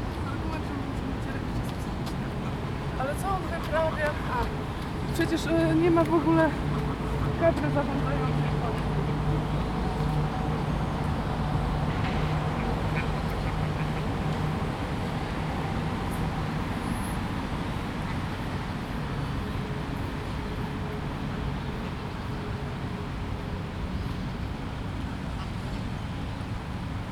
St Jame's Park, London. - St James's Park Opposite Rear of Downing St
Adjacent to the lake in St Jame's Park. There is a lovely bird squeak at 01:55 that sounds like a child's toy. Recorded on a Zoom H2n.